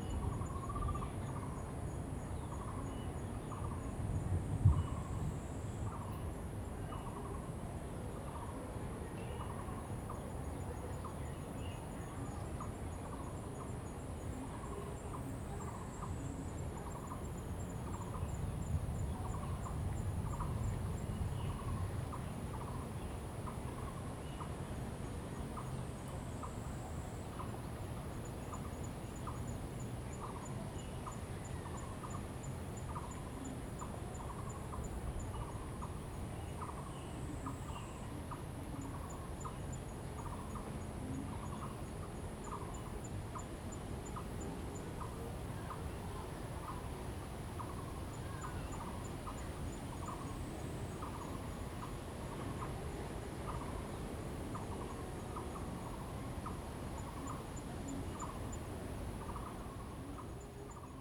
和美山, 新店區, New Taipei City - In the woods
In the woods, birds sound, Lakeshore came across the music and vocals
Zoom H2n MS+ XY